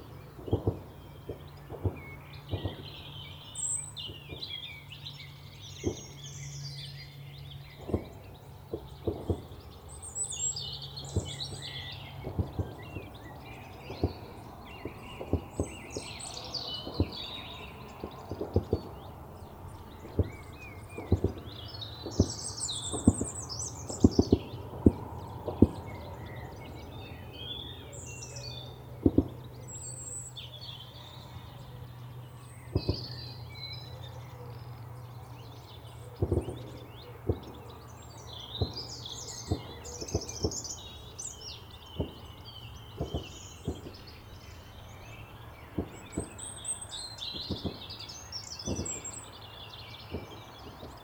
Creech Wareham, UK - Army Tankfire and Ravens
The Purbeck peninsula in Dorset has a lot of Military firing ranges and army practice areas. I visit regularly and always find it disturbing and very incongruous when the roads are closed and live round firing is juxtaposed with the beauty and peace of one of the most beautiful parts of England.
Mix Pre 6 Mk11, Sennheiser 416 and homemade cardioid pair.
15 March 2022, 15:22